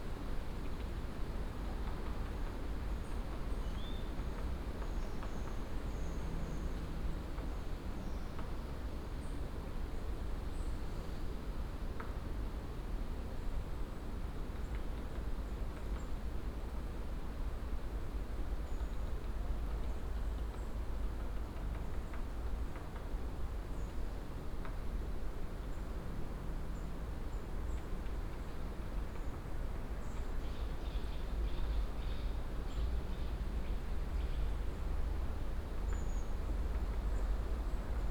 (binaural) autumn forest ambience in Morasko nature reserve. (sony d50 + luhd pm01bin)
Poznań, Poland, 11 November